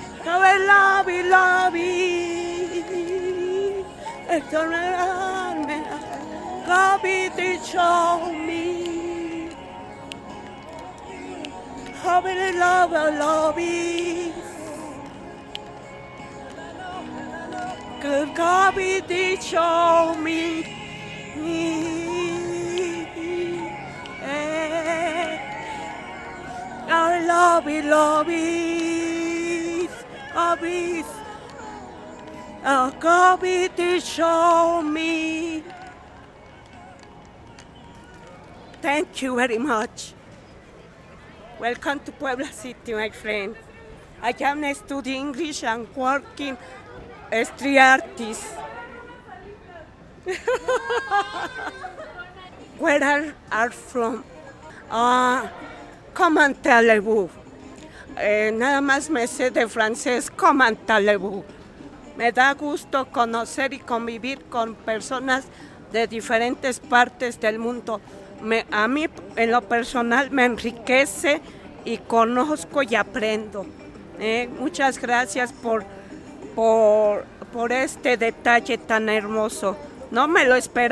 de Mayo, Centro histórico de Puebla, Puebla, Pue., Mexique - Puebla - 5 de Mayo
Puebla (Mexique)
Quelques minutes avec Clara "Street Artist" de Puebla